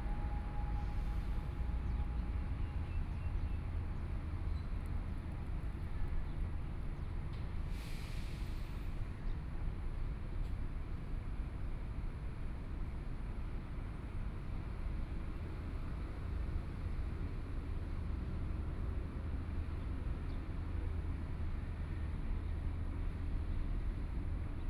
Arts Park - Taipei EXPO Park - Aircraft flying through
Aircraft flying through, Sony PCM D50 + Soundman OKM II